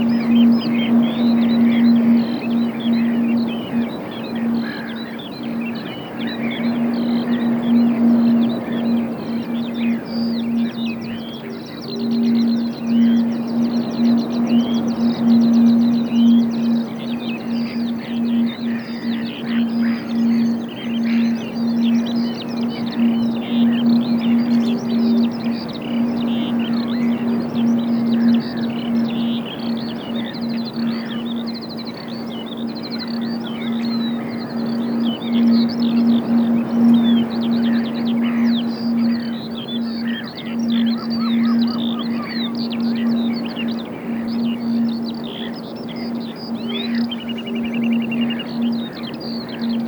Orford Ness National Trust nature reserve, Suffolk. - Bomb-Ballistics building
Skylark recorded through steel railings resonating in the wind recorded with Sound Devices702/MKH50.